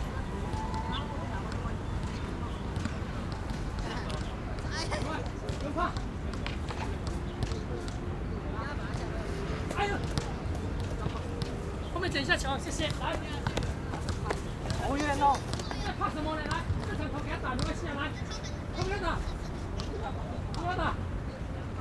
Erchong Floodway, New Taipei City - play baseball
Father is teaching kids to play baseball, Rode NT4+Zoom H4n
Sanzhong District, New Taipei City, Taiwan, 12 February 2012